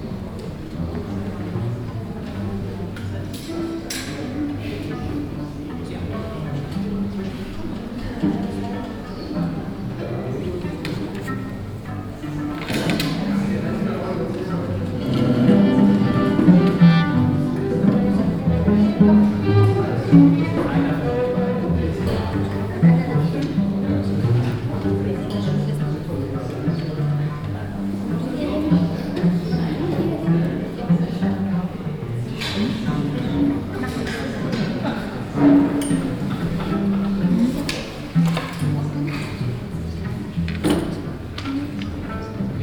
Bergisch Gladbach, Deutschland - Bensberg, Technologie Park, exhibition opening
Inside a bureau or office building of the Technologie Park during an exhibition opening of local artists. The sound of the room and the audience before the opening concert - an anouncement.
soundmap nrw - social ambiences, art places and topographic field recordings